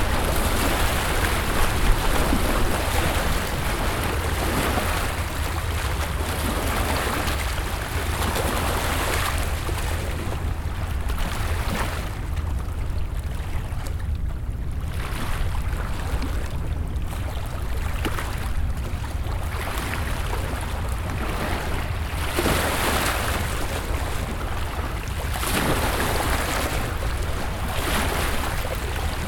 Western tip of Tommy Thompson Park. Zoom H4n with standard foam wind cover. Begins with sound of what appeared to be a dredging boat or something similar, leaving a harbour area off to the right. Later, a pleasure cruiser comes in from the left, towards the harbour. Eventually, wakes from the two boats can be heard breaking on the shore.